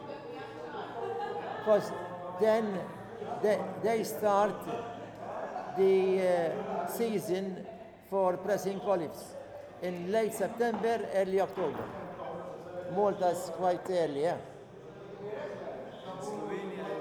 Il-Ħerba, Żejtun, Malta - Zejtun Band Club
Zejtun's mayor talks at Zejtun Band Club, of which he is also the president
(Sony PCM D50)
Bon Kunsill, Żejtun, Malta, April 2017